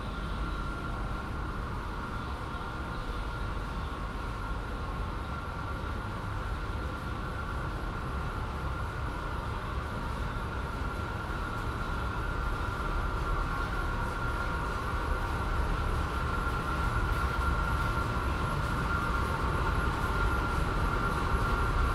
Belfast, Belfast, Reino Unido - Soundwalk in a machine room
An operating and yet quite human-emptied stokehold functioning in the basements of the engineering building at Queen's University
Belfast, Belfast, UK, 20 November